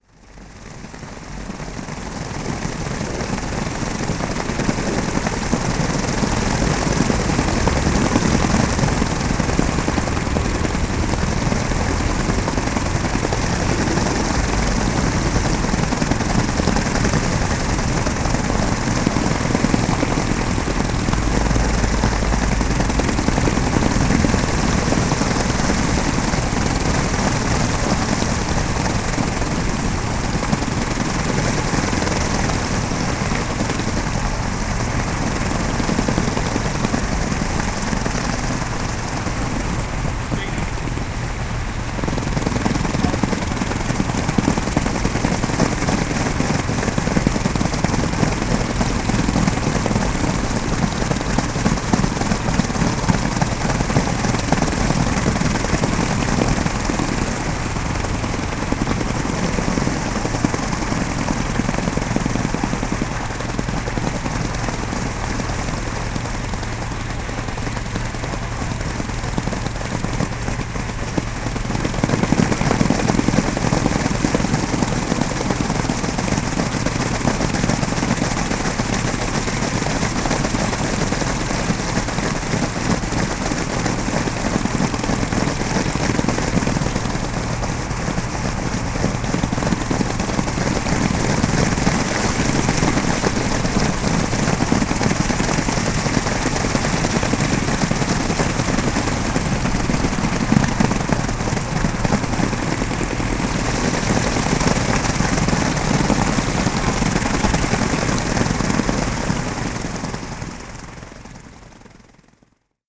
Fluttering Fountain, Houston, Texas - Fluttering Fountain @ Houston Museum of Natural Science
Water spilling off of a rounded, grooved edge - influenced by gusts of wind. People talking, airplane, moderate traffic noise.
Tascam DR100 MK2 internal uni mics
Texas, United States of America, 5 March 2013